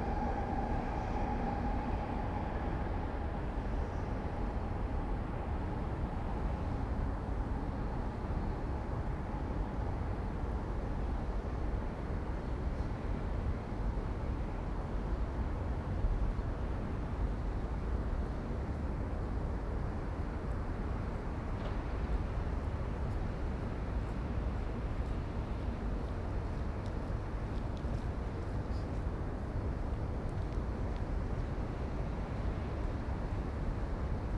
KPN office garden, Binckhorst, Den haag - kpn garden
quiet garden. Distant sounds of cars, trains, etc. footsteps. Soundfield Mic (ORTF decode from Bformat) Binckhorst Mapping Project